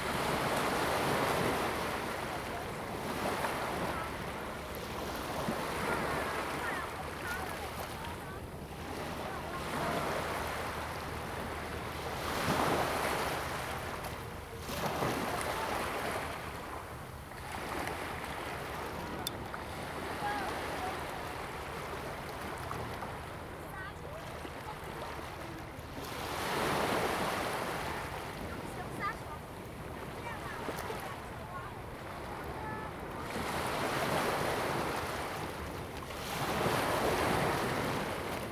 中澳沙灘, Hsiao Liouciou Island - Small beach
Small beach, The sound of waves and tides, Yacht whistle sound
Zoom H2n MS +XY